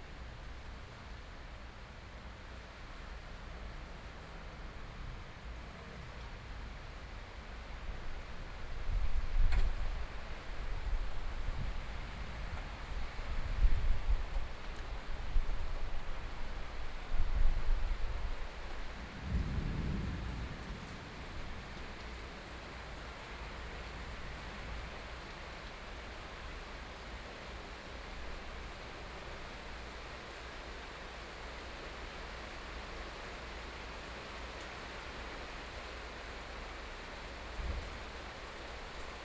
{"title": "Beitou - Upcoming thunderstorm", "date": "2013-07-07 15:05:00", "description": "Upcoming thunderstorm, Zoom H4n+ Soundman OKM II +Rode NT4, Binaural recordings", "latitude": "25.14", "longitude": "121.49", "altitude": "23", "timezone": "Asia/Taipei"}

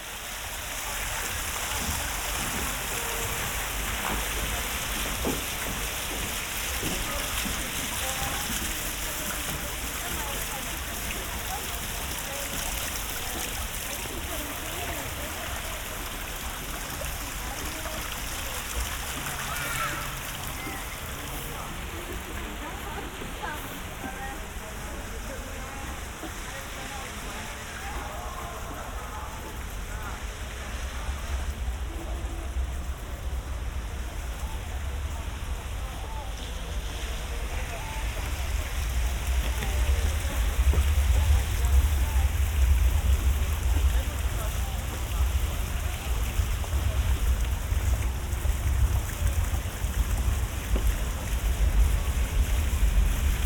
{"title": "Wyspa Młyńska, Bydgoszcz, Poland - (837a BI) Soundwalk in the evening", "date": "2021-09-26 20:55:00", "description": "A Sunday evening soundwalk through the island: some fountain sounds, teenagers partying etc...\nRecorded with Sennheiser Ambeo binaural headset on an Iphone.", "latitude": "53.12", "longitude": "18.00", "altitude": "36", "timezone": "Europe/Warsaw"}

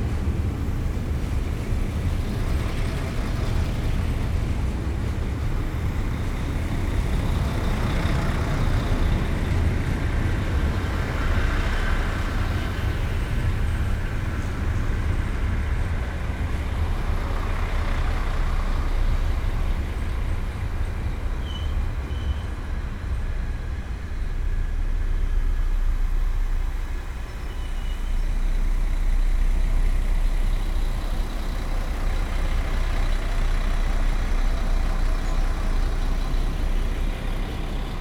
{"title": "cologne, hohenzollernbrücke - midnight ambience /w trains, ship, cyclists, gulls", "date": "2020-09-29 23:45:00", "description": "Köln, Cologne, almost midnight on Hohenzollernbrücke train bridge, trains passing by, a ship below on the Rhein river, cyclists and pedestrians. Remarkable deep drones by the freighter ships.\n(Sony PCM D50, Primo EM172)", "latitude": "50.94", "longitude": "6.97", "altitude": "37", "timezone": "Europe/Berlin"}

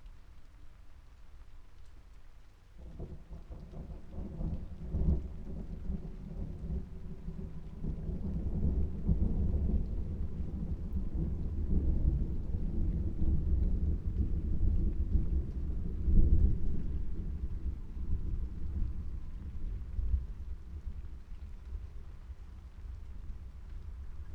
{"title": "Luttons, UK - inside shed ... outside thunderstorm ...", "date": "2020-07-31 21:13:00", "description": "inside shed ... outside thunderstorm ... xlr SASS on tripod to Zoom F6 ...", "latitude": "54.12", "longitude": "-0.54", "altitude": "76", "timezone": "Europe/London"}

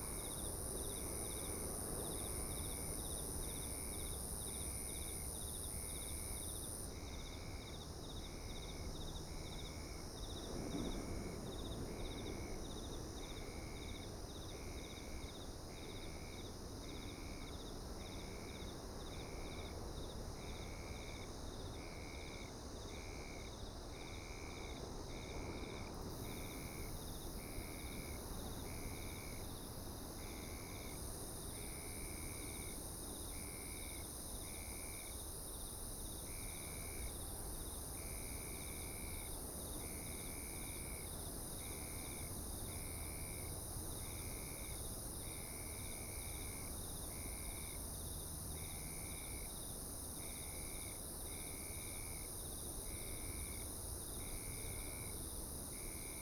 {"title": "台東市, Taiwan - The park at night", "date": "2014-01-17 18:19:00", "description": "The park at night, The distant sound of traffic and Sound of the waves, Zoom H6 M/S", "latitude": "22.75", "longitude": "121.17", "timezone": "Asia/Taipei"}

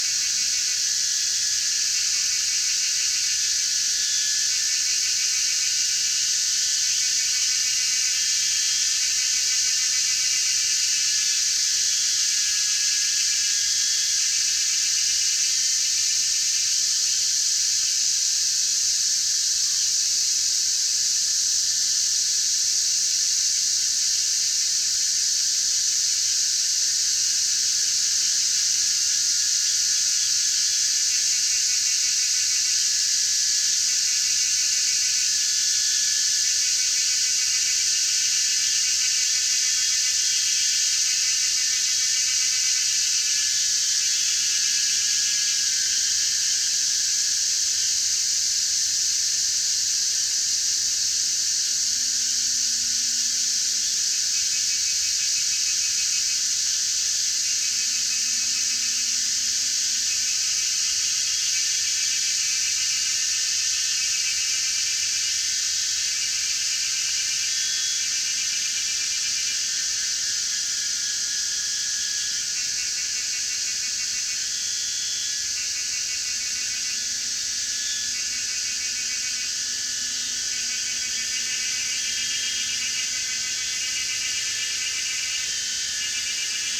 {"title": "水上, 桃米里 Nantou County - In the woods", "date": "2016-06-07 18:41:00", "description": "Cicadas cry, In the woods\nZoom H2n MS+XY", "latitude": "23.94", "longitude": "120.91", "altitude": "628", "timezone": "Asia/Taipei"}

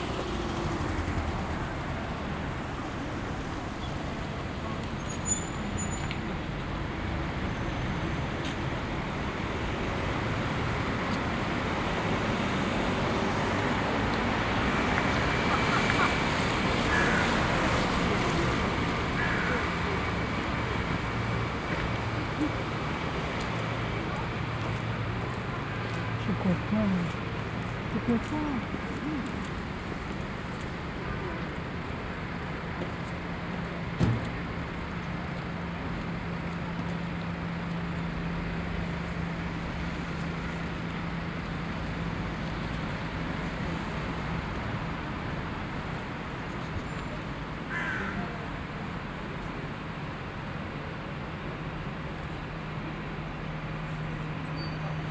{"title": "Hôpital Sainte-Élisabeth, Uccle, Belgique - Parking ambience", "date": "2022-01-14 14:50:00", "description": "Cars on the avenue, in the parking, some people passing by, a raven at 4'33.\nTech Note : SP-TFB-2 binaural microphones → Sony PCM-D100, listen with headphones.", "latitude": "50.81", "longitude": "4.37", "altitude": "118", "timezone": "Europe/Brussels"}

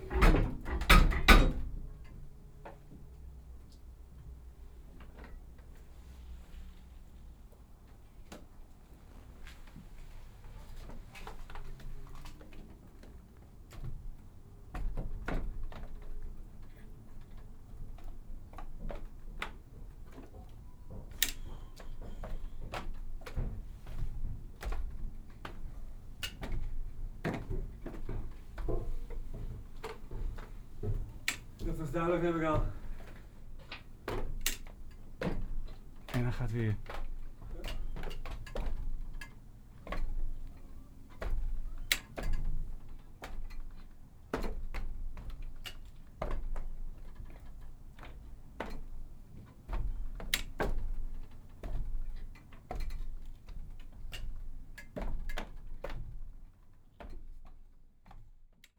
{"title": "naar boven onder de molenkap zonder te malen - het geluid van remmen heet vangen", "date": "2011-07-09 14:23:00", "description": "remmen heet vangen /\nabout the breaks of the windmill", "latitude": "52.15", "longitude": "4.44", "altitude": "1", "timezone": "Europe/Amsterdam"}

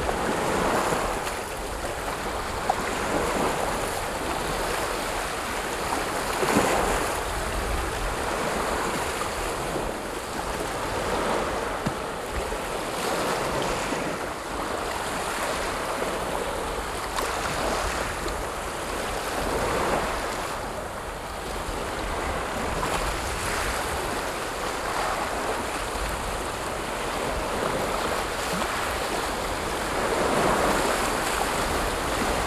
{"title": "Nørgårdvej, Struer, Danmark - Beach at Struer sound of medium heavy waves.", "date": "2022-09-30 17:15:00", "description": "Beach at Struer sound of medium heavy waves. Recorded with rode NT-SF1 Ambisonic Microphone. Øivind Weingaarde", "latitude": "56.48", "longitude": "8.61", "altitude": "1", "timezone": "Europe/Copenhagen"}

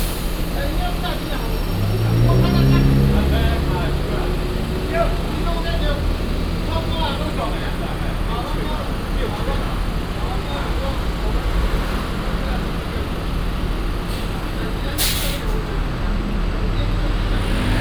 {"title": "Zhongshan Rd., Tamsui Dist., New Taipei City - The police are stopping a deceased father", "date": "2017-04-30 15:16:00", "description": "The police are stopping a deceased father, Traffic sound", "latitude": "25.17", "longitude": "121.44", "altitude": "12", "timezone": "Asia/Taipei"}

